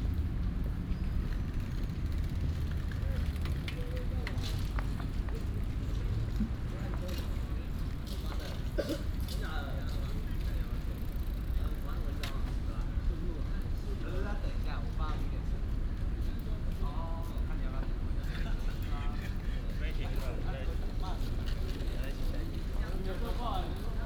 舟山路, National Taiwan University - in the university
in the university, Bicycle sound, Footsteps